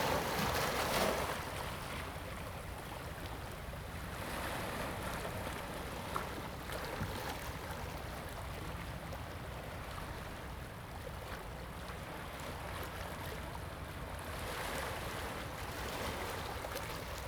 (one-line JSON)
{"title": "龍門漁港, Huxi Township - At the beach", "date": "2014-10-21 10:32:00", "description": "At the beach, Sound of the waves\nZoom H2n MS +XY", "latitude": "23.56", "longitude": "119.67", "altitude": "12", "timezone": "Asia/Taipei"}